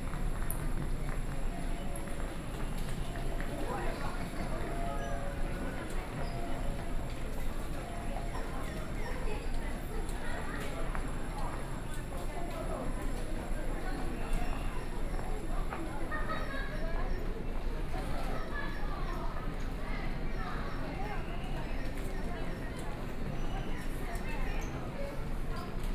Taipei Station, Taiwan - soundwalk
From the station lobby, Then went to the station platform floor entrance, And from the crowd of passengers, Station broadcast messages, Binaural recordings, Sony PCM D50 + Soundman OKM II